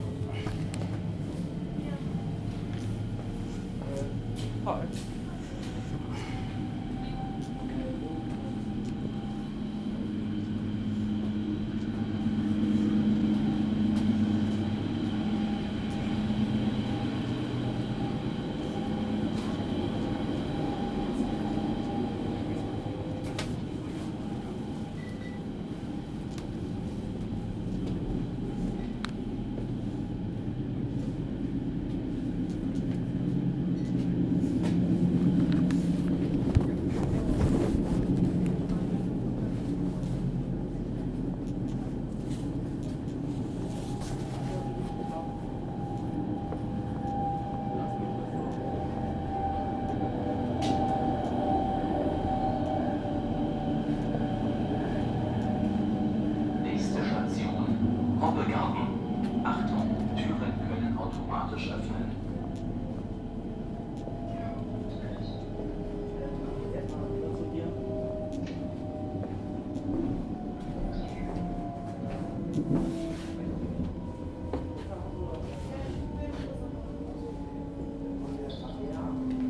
This recording was done inside the S5, with a zoom microphone. The recording is part of project where i try to capture the soundscapes of public transport ( in this case a train)
Brandenburg, Deutschland